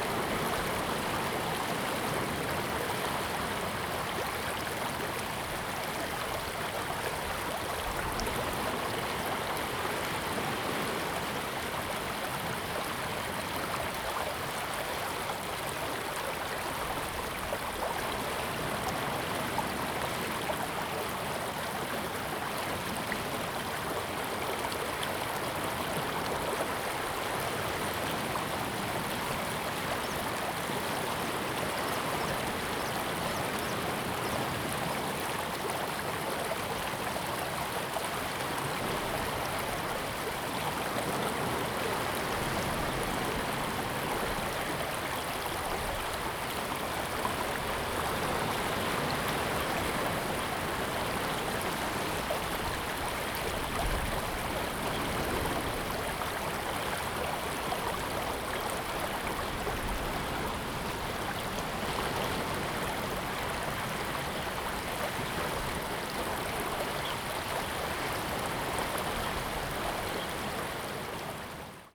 六塊厝, Tamsui Dist., New Taipei City - the waves and stream sound
Sound of the waves, stream sound
Zoom H2n MS+XY
New Taipei City, Tamsui District, April 2016